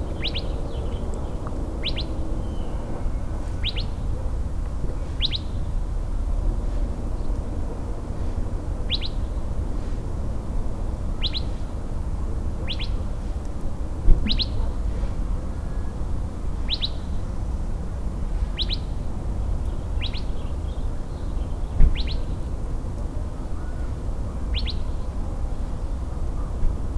Prta del Bosque, Bosques del Refugio, León, Gto., Mexico - En el camino del Cerro Gordo.
On the way to Cerro Gordo.
Some birds, very distant traffic, some flies or mosquitoes, some other animals, a closer vehicle, and the footsteps of someone who passed by on the path made of stones are heard.
I made this recording on september 13th, 2022, at 10:23 a.m.
I used a Tascam DR-05X with its built-in microphones and a Tascam WS-11 windshield.
Original Recording:
Type: Stereo
Se escuchan algunos pájaros, tráfico muy lejano, algunas moscas o zancudos, algunos otros animales, algún vehículo más cercano y los pasos de alguien que pasó cerca por el camino empedrado.
Esta grabación la hice el 13 de septiembre 2022 a las 10:23 horas.